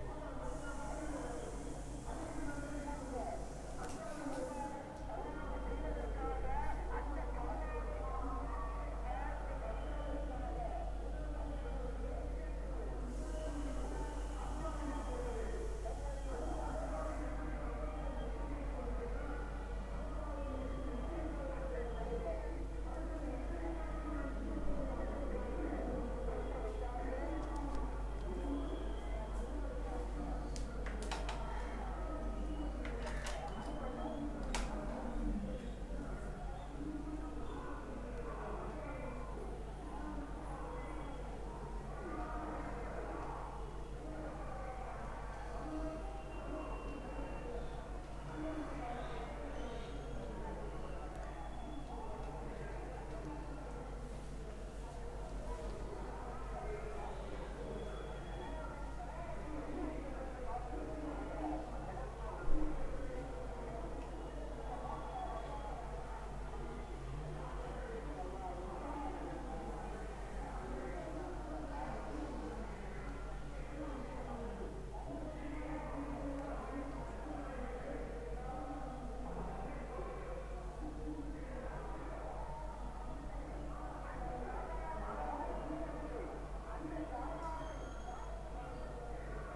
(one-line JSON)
{"title": "thanjavur speeches - tamil nadu, india", "description": "recorded in my hotel room - there were numerous political campaigns going on simultaneously in the town - the sound scape was fantastic!\nrecorded november 2007", "latitude": "10.79", "longitude": "79.14", "altitude": "56", "timezone": "Europe/Berlin"}